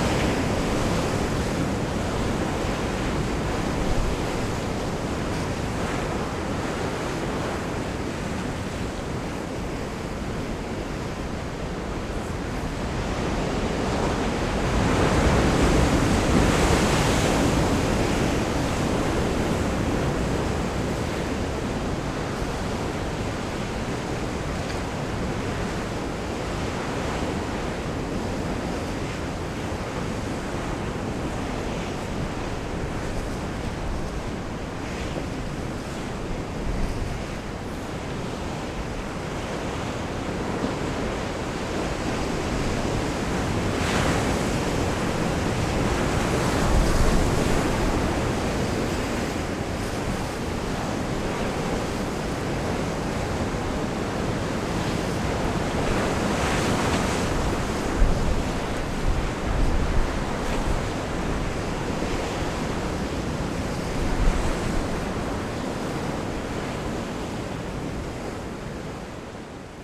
Ploumanach, lighthouse, France - Heavy waves medium distance
La mer est souvent agité au phare de Ploumanac'h. Les vagues sont assourdissantes.
At the Ploumanach lighthouse pretty wild waves crush into the rocks.
Getting closer is dangerous.
/Oktava mk012 ORTF & SD mixpre & Zoom h4n